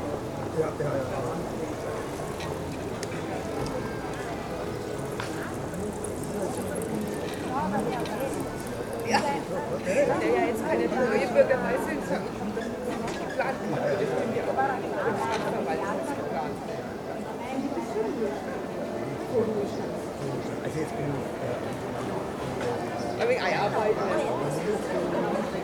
Sternplatz Buchhandlung, Bayreuth, Deutschland - Sternplatz Buchhandlung
central "sternplatz" near bookstore
Regierungsbezirk Oberfranken, Bayern, Deutschland, 29 May 2013, 14:09